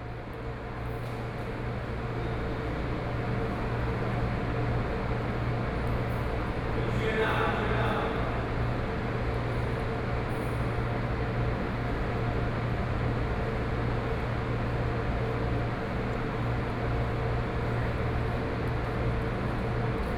Su'ao Station, Taiwan - in the station hall
Sitting in the station hall, Ceilinged space station, When passengers rarely, Zoom H4n+ Soundman OKM II
7 November 2013, 13:08